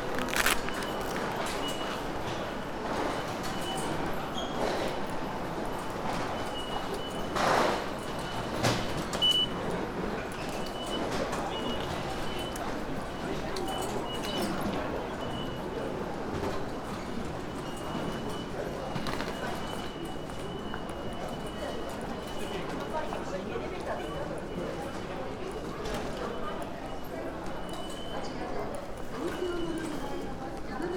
hamamatsucho station, tokyo - metro voices